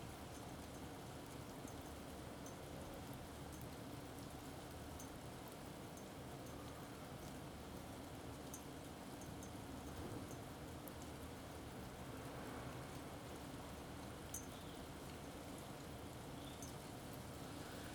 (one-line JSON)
{"title": "Carrer de Joan Blanques, Barcelona, España - Rain25032020BCNLockdown", "date": "2020-03-25 16:00:00", "description": "Rain field recording made from a window during the COVID-19 lockdown.", "latitude": "41.40", "longitude": "2.16", "altitude": "65", "timezone": "Europe/Madrid"}